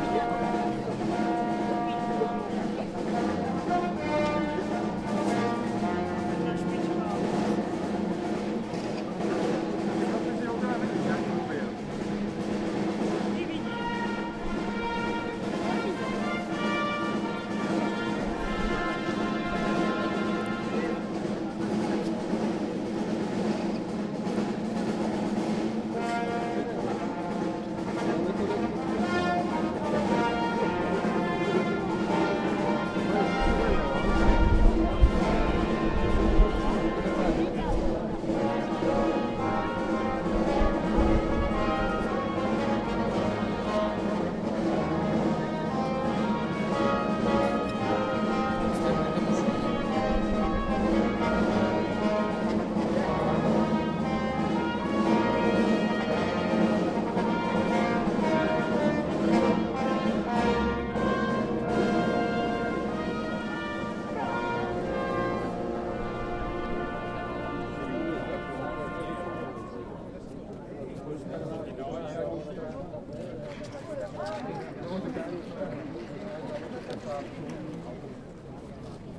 Prague Castle, Changing of the guard
standing by the gate during the ceremony.